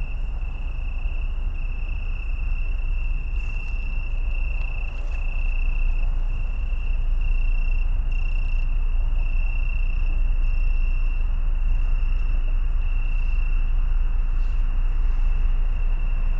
Rheinufer, Köln, Deutschland - Italian tree crickets, ship drone
Weinhähnchen (Italian tree cricket, Oecanthus pellucens) near river Rhein, Köln, very deep drone of a ship passing by
(Sony PCM D50)